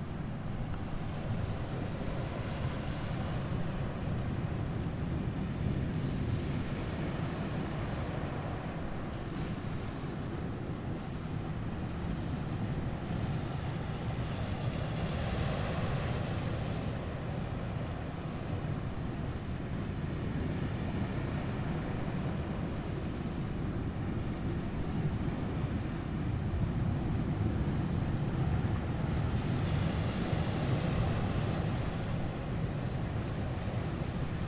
Oileán Chléire, Cape Clear Island, beach on north side. - Sizeable swell and wind with the odd bird passing
Recorded with Zoom H4 and Rycote windshield. There was a sizeable swell pounding the rocks in the distance and the closer lapping of the waves in the sheltered bay nearby.
Knockanamorough, Co. Cork, Ireland